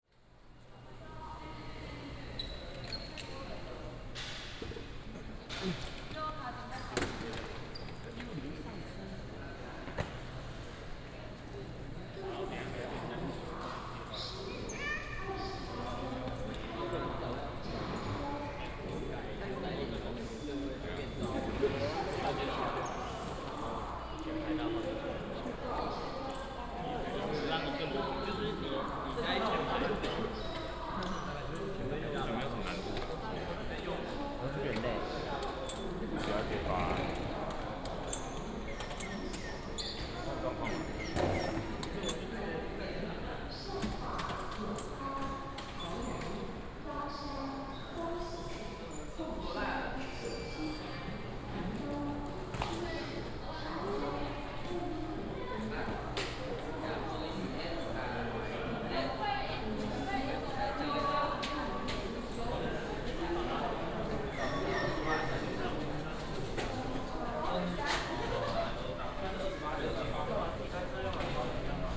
Shalu Station, Shalu District - In the station
From the station hall to the platform